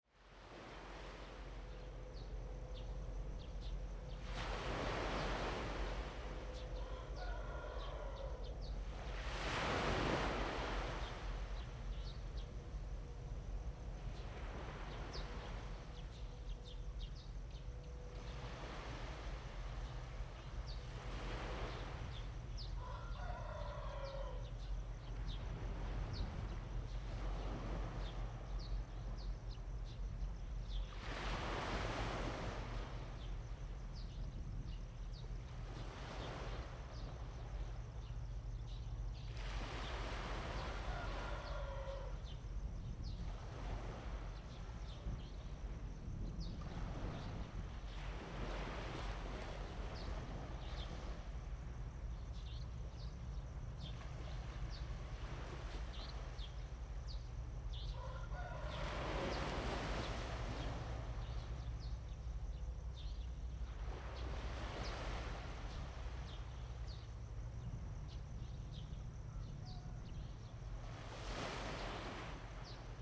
In the morning next to the fishing port, Chicken crowing, Bird cry, Sound of the waves, Traffic sound
Zoom H2n MS+XY